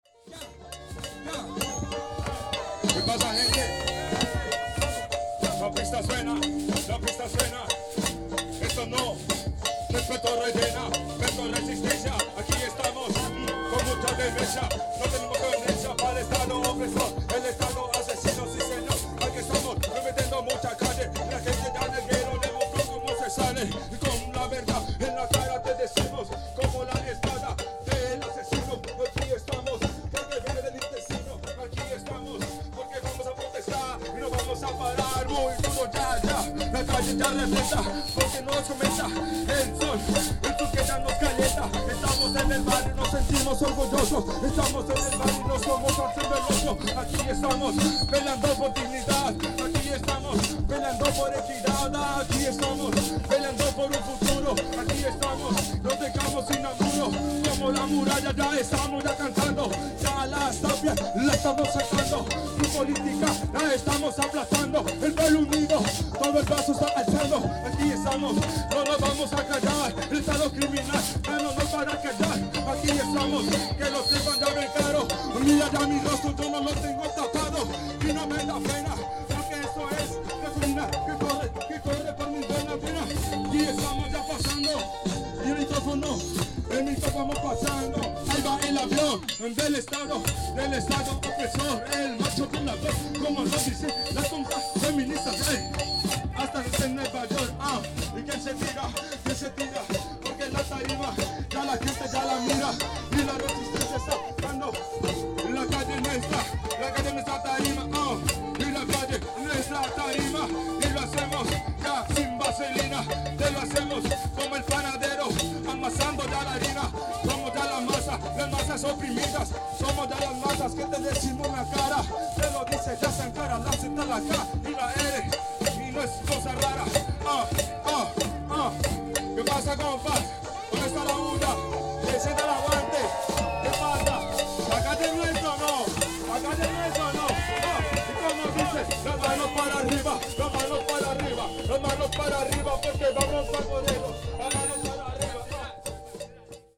Cra., Cali, Valle del Cauca, Colombia - Puerto Resistencia
Expresión artística durante el paro cívico de 2019 en el sector de Puerto Rellena. Raperos y cantantes pidiendo mejores condiciones de vida.
29 November 2019, 11:16